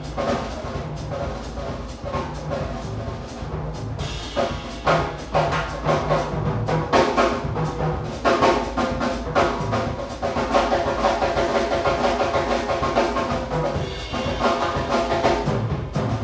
Budapest, Jazz Club, East Gipsy Band